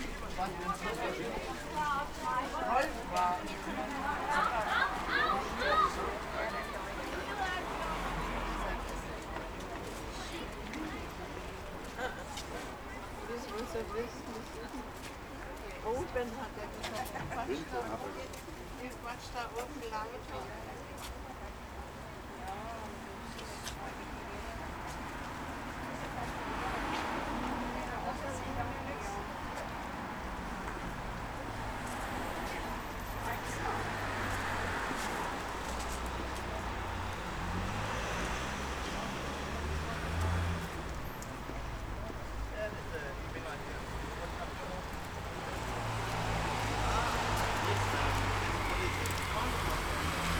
berlin wall of sound-checkpoint charlie. j.dickens 140909
Berlin, Germany